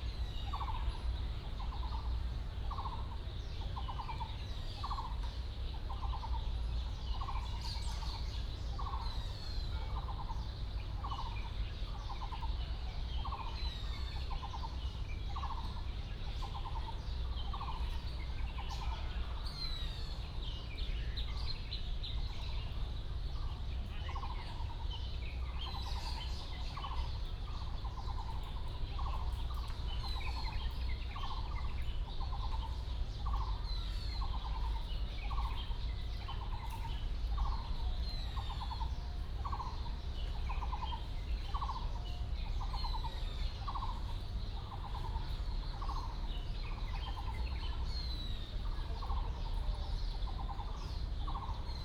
嘉義市孔廟, Chiayi City - Birds sound
in the Park, birds sound